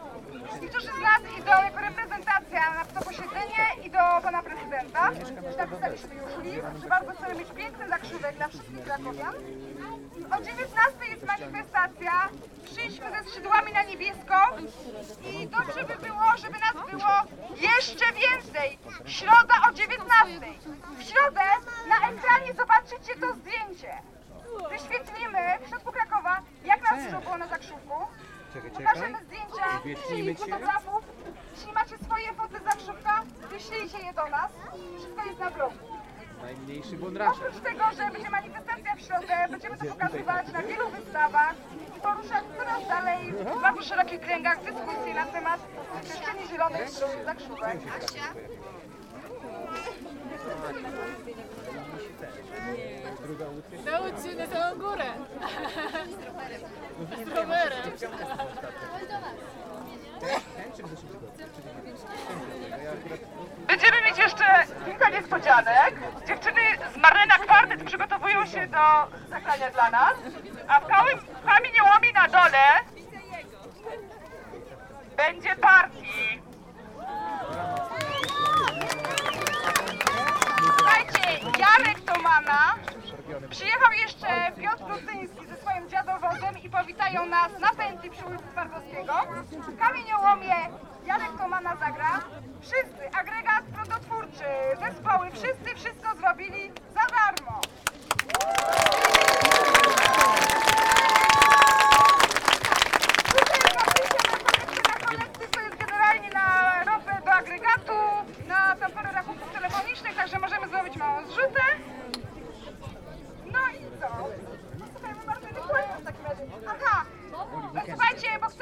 Kraków, Zakrzówek
Modraszkowy Zlot na Zakrzówku / environmental protest against developing one of the most beautiful green areas in Kraków into a gated community for 6000 inhabitants.
5 June 2011, 17:30